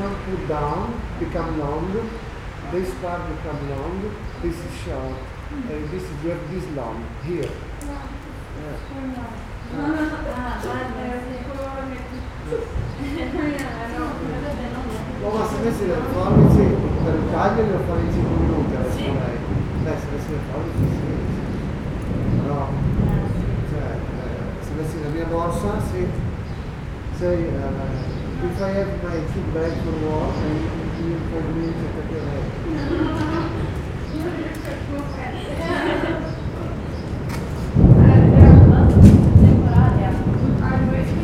Library, Nova Gorica, Slovenia - People gathering infront the the Library
People gathering in front the covered entrance of the Library at the beginning of the rain.